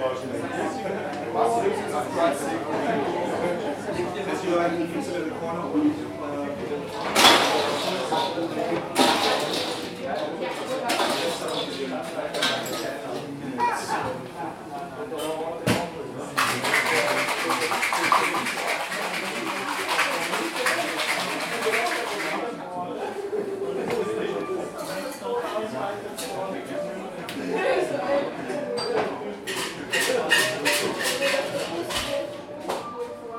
Kärntner Str., Wien, Österreich - american bar
barmixer preparing cocktails